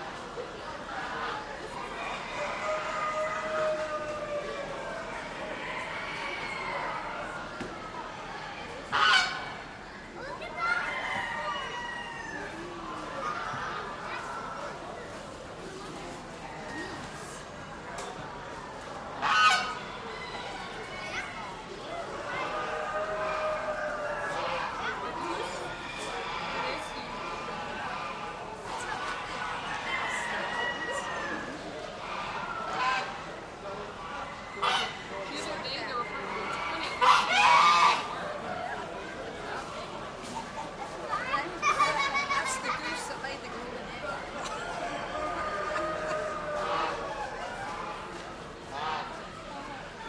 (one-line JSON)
{"title": "Kansas State Fairgrounds, E 20th Ave, Hutchinson, KS, USA - Southeast Corner, Poultry Building", "date": "2017-09-09 16:11:00", "description": "A Chinese Light Goose (Champion) and a medium Old Buff Gander (Champion) talk. Other poultry are heard in the background. Stereo mics (Audiotalaia-Primo ECM 172), recorded via Olympus LS-10.", "latitude": "38.08", "longitude": "-97.93", "altitude": "469", "timezone": "America/Chicago"}